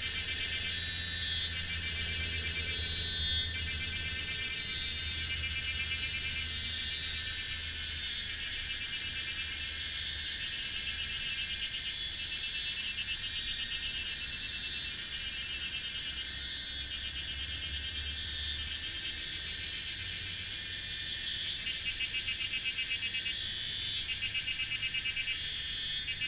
水上巷, Puli Township - Cicadas cry
Cicadas cry, Traffic noise
Nantou County, Puli Township, 水上巷, June 2015